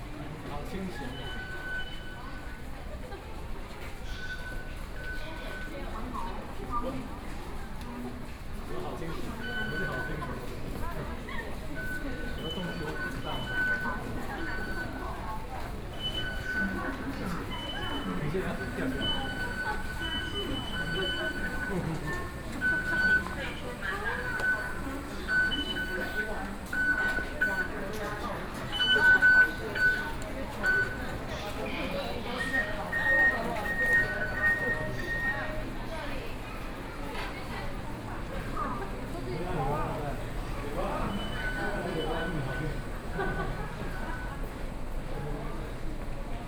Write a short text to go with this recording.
walking out of the station, Binaural recordings, Sony PCM D50 + Soundman OKM II